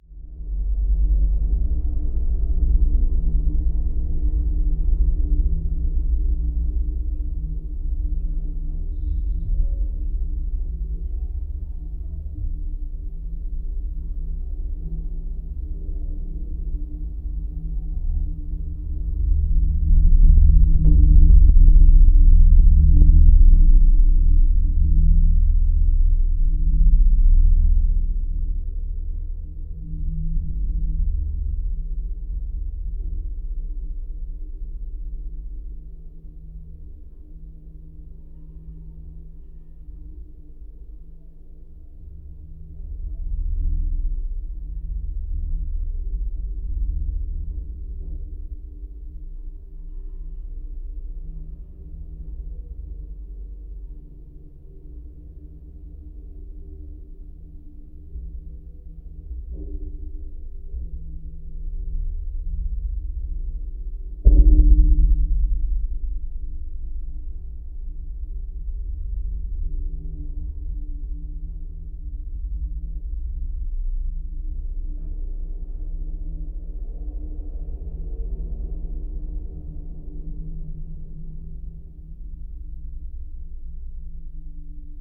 lstening to the highest lithuanian(built entirely from stone) church's waterpipe. very low frequencies!